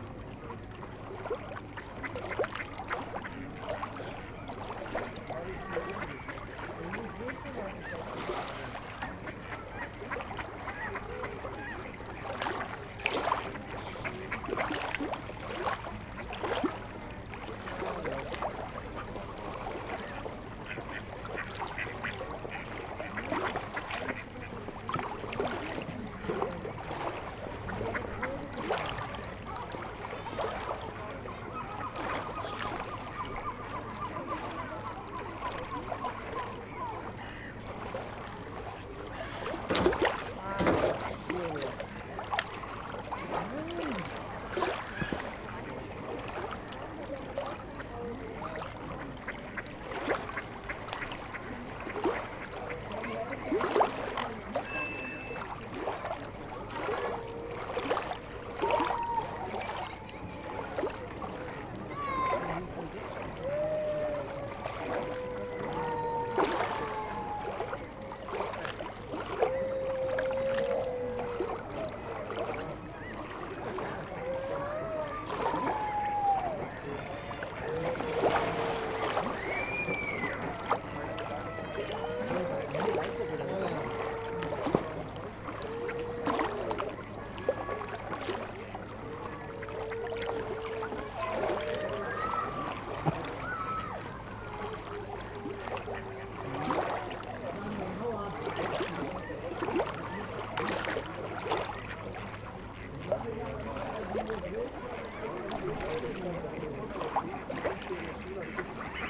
berlin: greenwichpromenade - the city, the country & me: children feeding ducks and swans
the city, the country & me: march 19, 2011